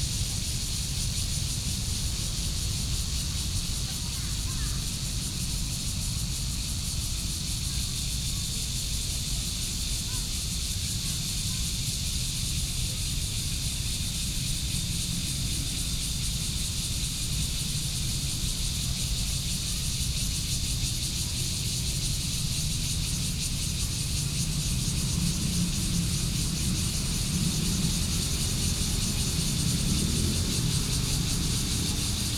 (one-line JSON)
{"title": "北投區豐年公園, Taipei City - Cicadas sound", "date": "2014-07-18 17:41:00", "description": "In the Park, Traffic Sound, Cicadas sound\nSony PCM D50+ Soundman OKM II", "latitude": "25.14", "longitude": "121.50", "altitude": "14", "timezone": "Asia/Taipei"}